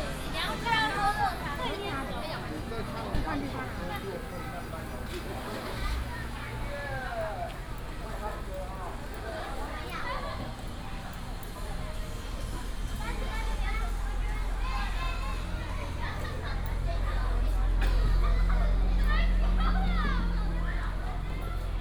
Zhongzheng Rd., Hengshan Township - Sightseeing Street
Sightseeing Street, Many students